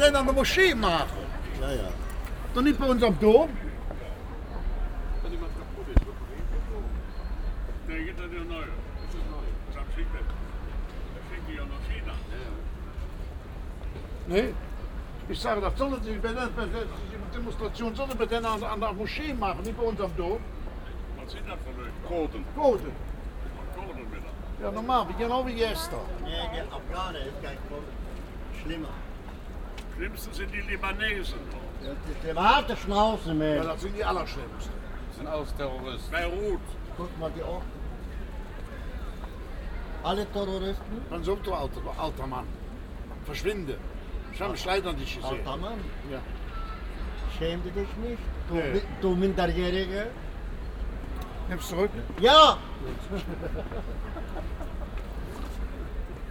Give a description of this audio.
konversationen wartender taxifahrer an kölns touristenmeile dom - zufalls aufnahmen an wechselnden tagen, soundmap nrw: social ambiences/ listen to the people - in & outdoor nearfield recordings